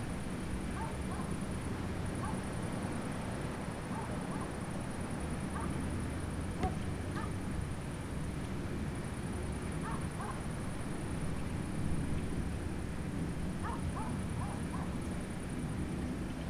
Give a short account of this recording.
ATLAS Melgaço - Sound Workshop